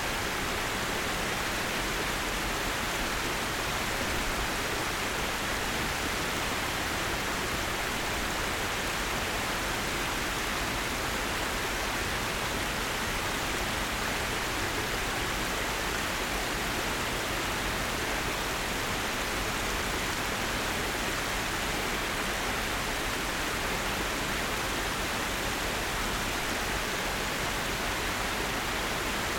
Lexington Ave, New York, NY, USA - Sunken Plaza Waterfall
Sounds from the artificial waterfall at 601 Lex Ave - recorded at the sunken plaza level.